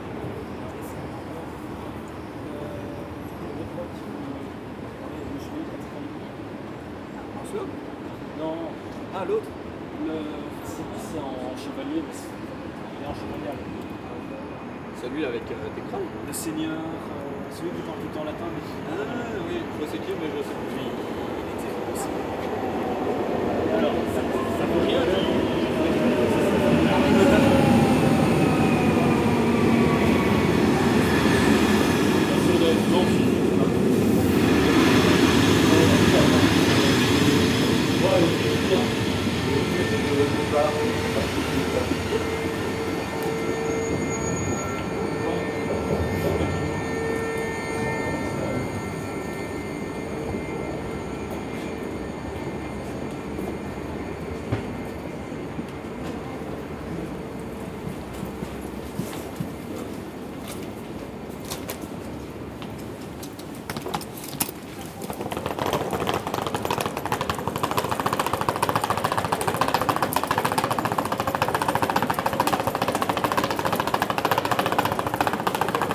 Charleroi, Belgique - Drug addicts
In front of the Charleroi station, some drug addict people discussing. French speaking, they discuss about what the had stolen in various stores. One says : I'm a very quiet person but I'm very violent. After, it's a walk into the station, with some glaucous music reverberating. At the end on the platform, a train is leaving to Namur.